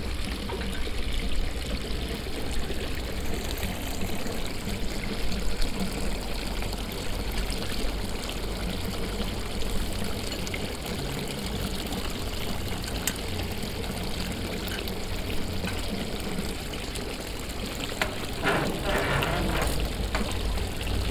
diekirch, antoniusstroos, fountain
Another fountain with a group of donkey figures. Here some parts of the metal figures like legs or ears can be moved.
international village scapes - topographic field recordings and social ambiences
Diekirch, Luxembourg, August 2011